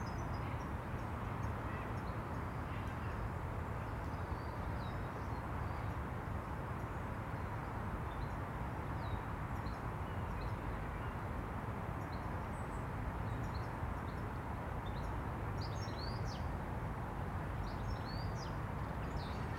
Contención Island Day 11 inner east - Walking to the sounds of Contención Island Day 11 Friday January 15th
The Poplars Roseworth Avenue The Grove Stoneyhurst Road West Stoneyhurst Road The Quarry Park
Above the traffic noise of Matthew Bank
two women watch their children play
A man limps slowly by
perhaps anticipating icy steps to come
Blackbirds toss the leaf litter
beneath the ash trees
Small birds move through the trees and bushes
on the other side of the park
North East England, England, United Kingdom, 15 January 2021, ~11:00